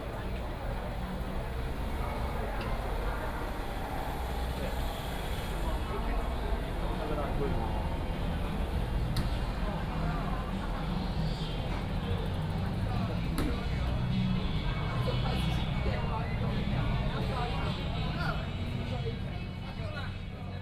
Zhenjiang St., Taipei City - Student activism
Walking through the site in protest, People and students occupied the Legislature
Binaural recordings
Taipei City, Taiwan, 22 March 2014